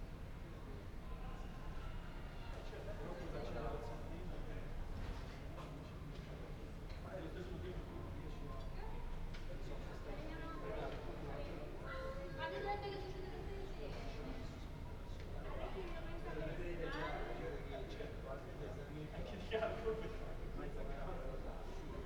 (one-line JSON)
{"title": "Ascolto il tuo cuore, città, I listen to your heart, city. Several chapters **SCROLL DOWN FOR ALL RECORDINGS** - Friday afternoon May 1st with laughing students in the time of COVID19 Soundscape", "date": "2020-05-01 15:43:00", "description": "\"Friday afternoon May 1st with laughing students in the time of COVID19\" Soundscape\nChapter LXIII of Ascolto il tuo cuore, città. I listen to your heart, city\nFriday May 1stth 2020. Fixed position on an internal terrace at San Salvario district Turin, fifty two days after emergency disposition due to the epidemic of COVID19.\nStart at 3:43 p.m. end at 4:09 p.m. duration of recording 25’46”", "latitude": "45.06", "longitude": "7.69", "altitude": "245", "timezone": "Europe/Rome"}